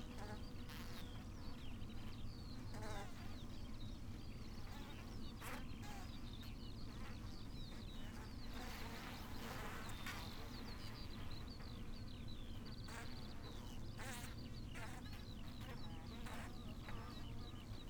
Green Ln, Malton, UK - bee swarm ...
bee swarm ... SASS to Zoom F6 ... bees swarming on the outside of one of the hives ...
England, United Kingdom, 10 July 2020, 06:27